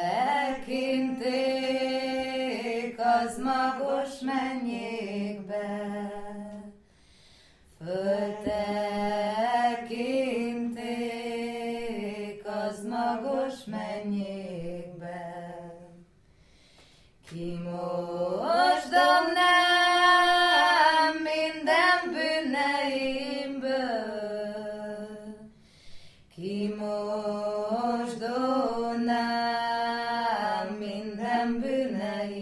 Hungarian folk song, which Zsuzsanna and Livia were singing in one of the smichov’s apartments. About love, dead and birds at liberty.